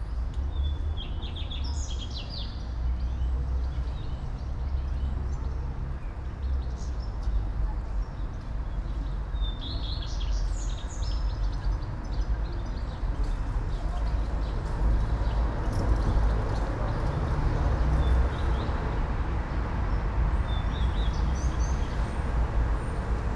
all the mornings of the ... - may 8 2013 wed